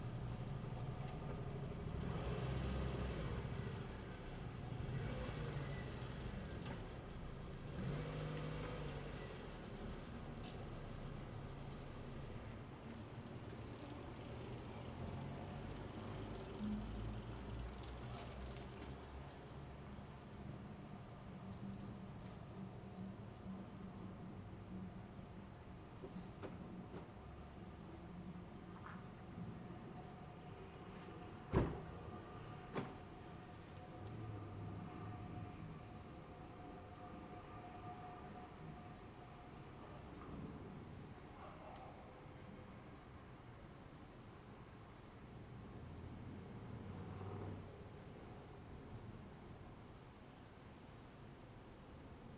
A typical day in the neighourhood. Cars passing by, pedestrians walking, dogs barking... Recorded with Zoom H2n (MS, on a tripod).
Koprivničko-križevačka županija, Hrvatska